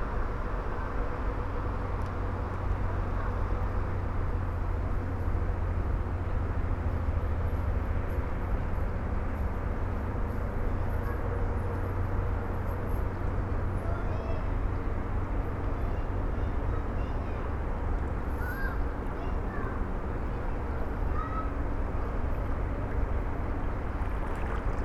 canal, Drava river, Zrkovci, Slovenia - above small bridge